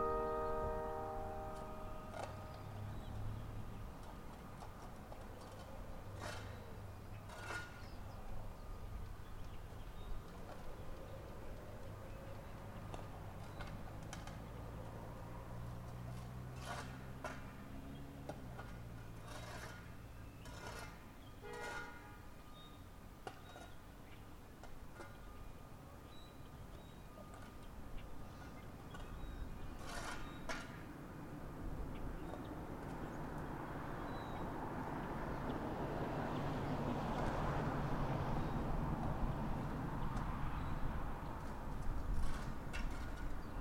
Finch Park, Lake St., Arcadia, MI, USA - Noon Chimes (Trinity Lutheran Church)
At Finch Park, near the tennis courts, the noon chimes are heard from about a block and a half away. A west wind continues to keep the air temperature relatively low on this early spring Sunday. The township's handyman, with a shovel, tidies up the edges of a sidewalk across the street. Stereo mic (Audio-Technica, AT-822), recorded via Sony MD (MZ-NF810, pre-amp) and Tascam DR-60DmkII.